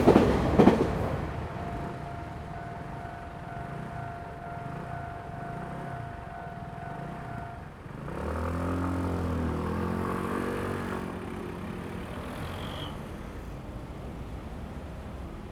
Railway level crossing, Traffic Sound, Train traveling through
Zoom H2n MS+XY
Hualien County, Taiwan, 29 August, ~10:00